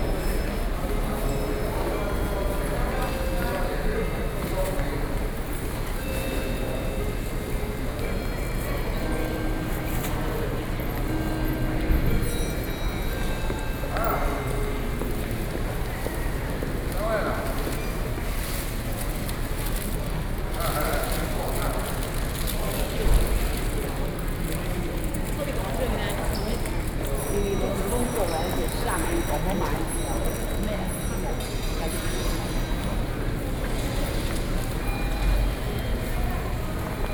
Waiting on payment and medicine cabinet stage, High-ceilinged hall, (Sound and Taiwan -Taiwan SoundMap project/SoundMap20121129-8), Binaural recordings, Sony PCM D50 + Soundman OKM II